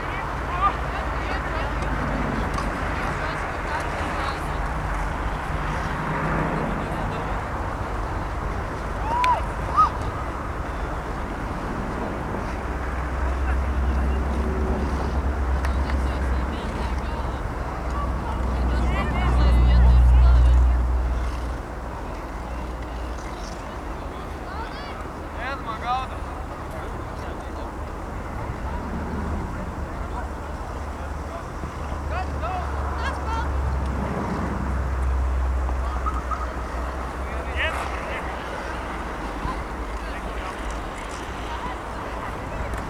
public skating rink in our town
28 December, ~18:00